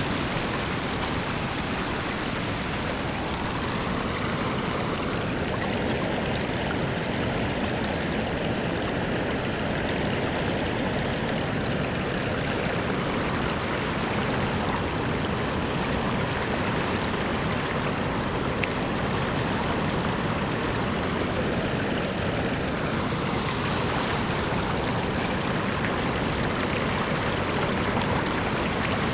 Zagreb, Hrvatska - Water stream
Water stream on the Sava river, recorded with Zoom H4n.
Zagreb, Croatia